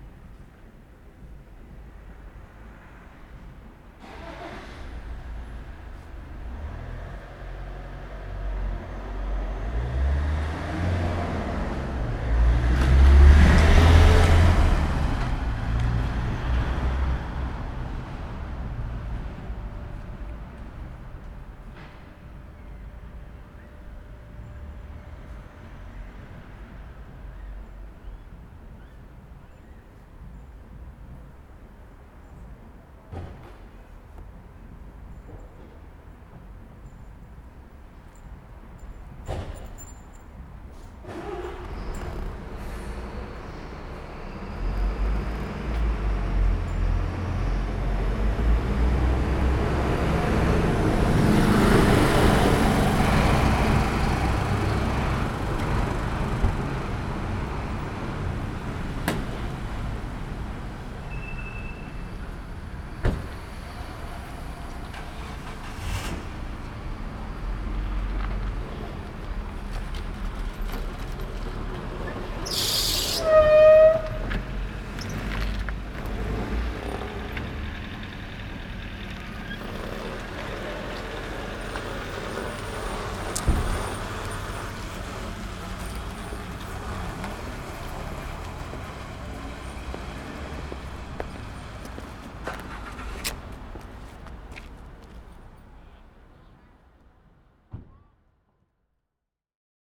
Gohlis-Süd, Leipzig, Deutschland - gate of premises of neue musik leipzig
The recording was made within the framework of a workshop about sound ecology of the class for sound art at Neue Musik Leipzig. Passing by cars, bycicles, people. A sack barrow, steps. Neue Musik Leipzig - Studio für Digitale Klanggestaltung.